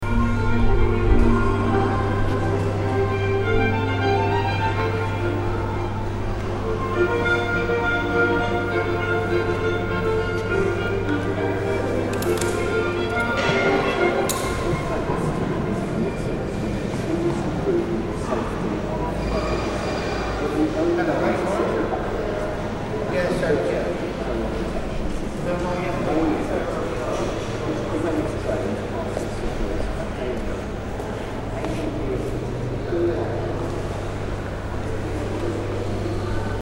Bristol Temple Meads Station Entrance
City of Bristol, UK, 13 March 2003, ~12:00